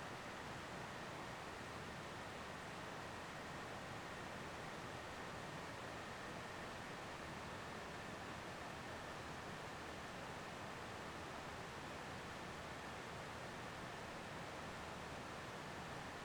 Devils Den State Park - Night Time Campground Sounds
Night time sounds of Campground E at Devils Den State Park. It is mostly quiet with the exception of Lee Creek running in the background.
15 April, 22:20